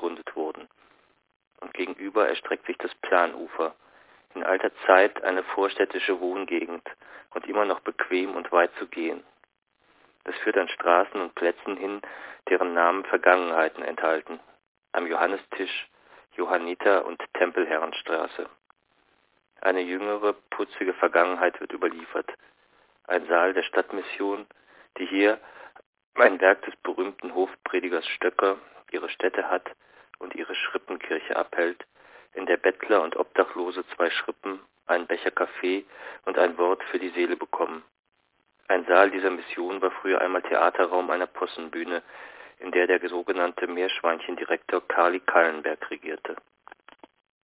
Der Landwehrkanal (6) - Der Landwehrkanal (1929) - Franz Hessel
Berlin, Germany